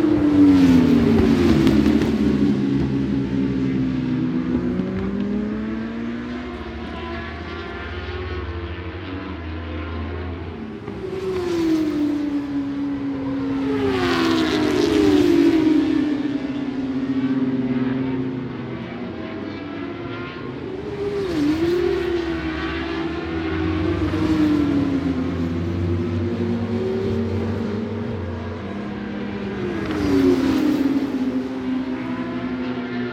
Scratchers Ln, West Kingsdown, Longfield, UK - British Superbikes 2005 ... 600 ...
British Superbikes 2005 ... 600 free practice one ... one point stereo mic to minidisk ...
26 March